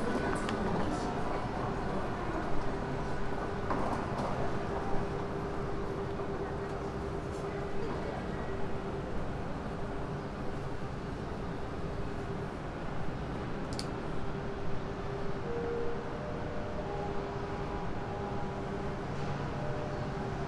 udagawacho, shibuya, tokyo - walk around one of the buildings
street ambience with a girl calling and inviting costumers